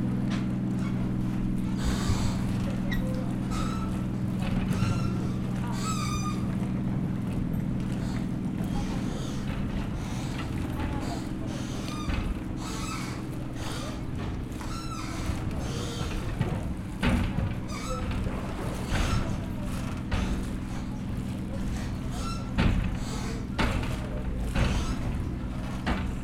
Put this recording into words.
docs moving, water and metal noises, boats and people